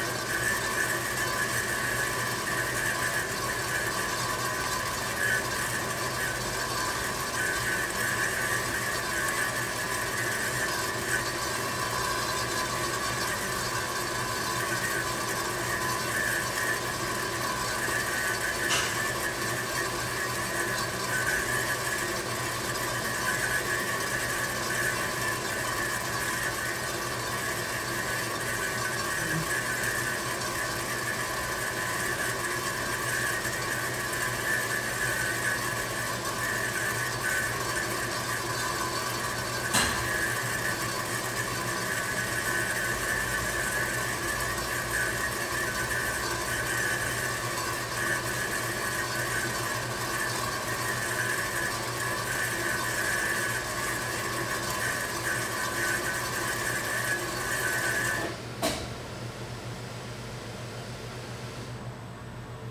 Here the sound of a process that is described with the german word: anschwänzen - translation says: sparging - but I am not so sure. In this process the brew master fills in water inside the brewing tank from inside thru a kind of shower.
Heinerscheid, Cornelyshaff, Brauerei, anschwänzen
Hier das Geräusch von dem Prozess, der mit dem deutschen Wort "anschwätzen" beschrieben wird. Dabei füllt der Braumeister Wasser in den Brautank durch eine interne Dusche.
Heinerscheid, Cornelyshaff, aspersion
Maintenant le bruit d’un processus qui porte en allemand le nom de : anschwänzen – la traduction est : asperger. Au cours de ce processus, le maître brasseur injecte de l’eau à l’intérieur de la cuve de brassage par une sorte de douche.
Heinerscheid, Luxembourg, 12 September 2011